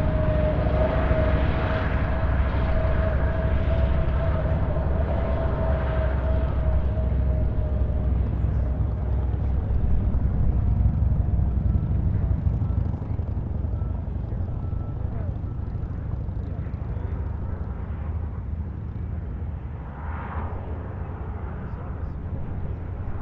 neoscenes: 250 ton mining trucks